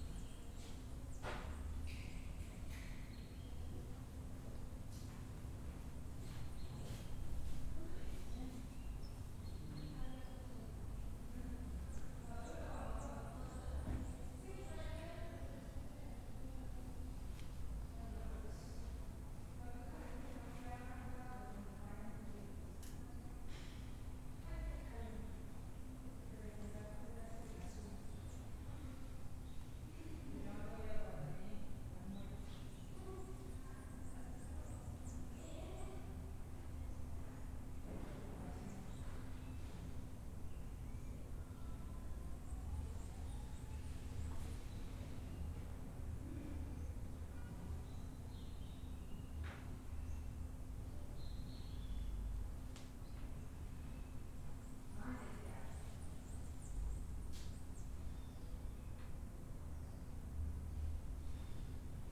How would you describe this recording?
Ambiente grabado en el centro de producción de televisión de la Universidad de Medellín, durante el rodaje del cortometraje Aviones de Papel. Sonido tónico: voces, pájaros cantando. Señal sonora: objetos moviéndose. Equipo: Luis Miguel Cartagena Blandón, María Alejandra Flórez Espinosa, Maria Alejandra Giraldo Pareja, Santiago Madera Villegas, Mariantonia Mejía Restrepo.